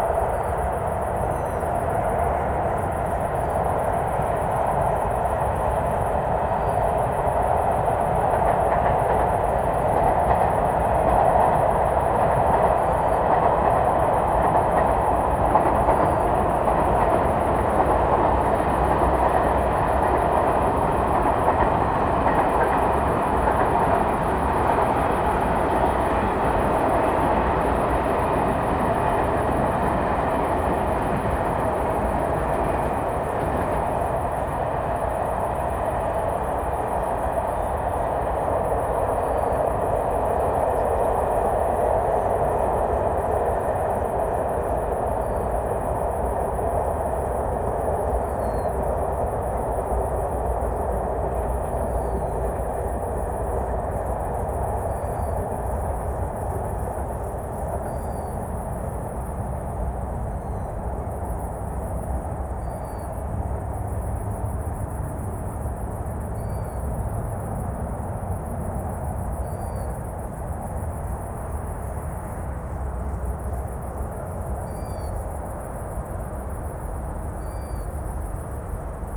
Late night at the city edge
Dark and atmospheric trains, insects and distant traffic at the rural edge of Prague.